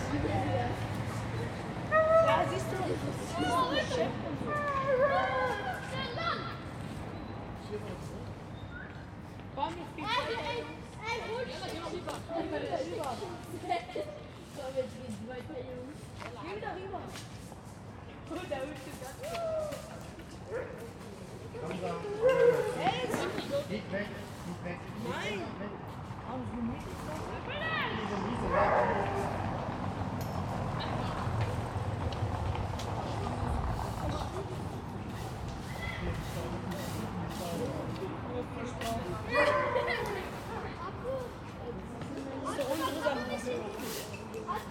Koloniestraße, Berlin, Deutschland - Koloniestraße, Berlin - children in front of Frisbee (youth center), whining dog
Koloniestraße, Berlin - children in front of Frisbee (youth center), whining dog. In front of the Frisbee e.V. youth center, a group of teenagers equipped with brooms is told to sweep the leaves from the sidewalk. Some of them throw pebbles instead.
[I used the Hi-MD-recorder Sony MZ-NH900 with external microphone Beyerdynamic MCE 82]
Koloniestraße, Berlin - Kinder vor dem Jugendzentrum Frisbee e.V., jaulender Hund. Vor dem Jugendzentrum ist eine Gruppe von Jugendlichen mit Besen ausgerüstet, um den Gehsteig zu fegen. Statt dessen werfen einige von ihnen lieber mit kleinen Steinen aufeinander.
[Aufgenommen mit Hi-MD-recorder Sony MZ-NH900 und externem Mikrophon Beyerdynamic MCE 82]